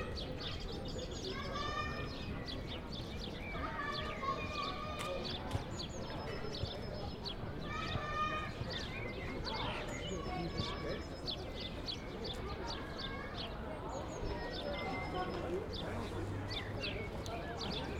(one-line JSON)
{"title": "Kiautschoustraße, Berlin, Duitsland - A minute before entering Restaurant Fünf & Sechzig", "date": "2018-04-06 19:17:00", "description": "Zoom H6 - XY mic 120°\nBeautiful weather", "latitude": "52.54", "longitude": "13.35", "altitude": "40", "timezone": "Europe/Berlin"}